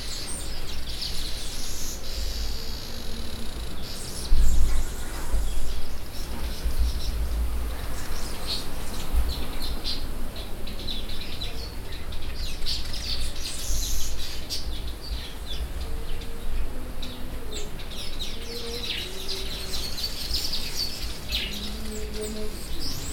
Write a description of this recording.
...morning sounds in front of my window… weaver birds in the mnemu trees, sounds from my brothers at the kitchen getting in to swing, school kids still passing by on the path along the fence ...